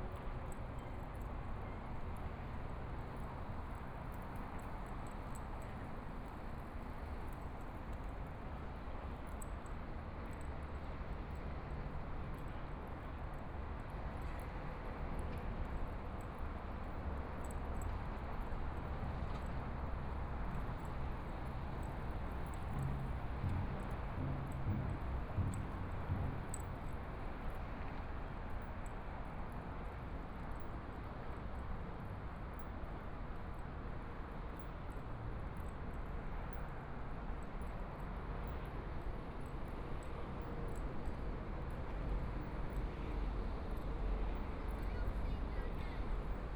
Walking along the river, Pedestrian, Traffic Sound, A lot of people riding bicycles through
Binaural recordings, ( Proposal to turn up the volume )
Zoom H4n+ Soundman OKM II
Taipei City, 汐止五股高架段, 16 February 2014, 16:24